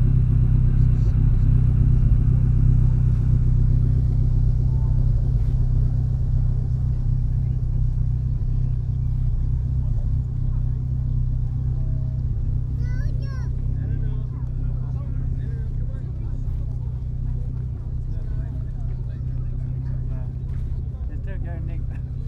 Hambleden, Henley-on-Thames, UK - Jaguar, Ferrari and Classic cars behind the pub.
The lovely hamlet of Hambledon in Oxfordshire played host today to a classic car meet in the recreation field behind the pub. There were Jaguars, Ferraris Aston Martins Triumphs and many more. I walked around the show ground with the Sony M10 and built in mics, it is unedited.
21 May 2017